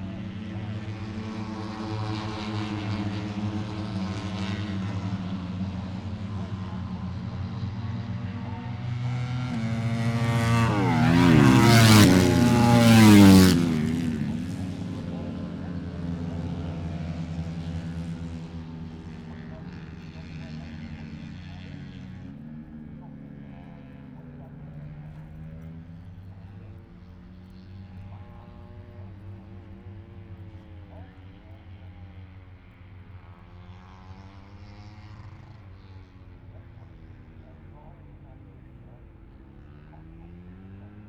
Silverstone Circuit, Towcester, UK - British Motorcycle Grand Prix 2017 ... moto one ...
moto one ... free practice one ... open lavalier mics on T bar and mini tripod ...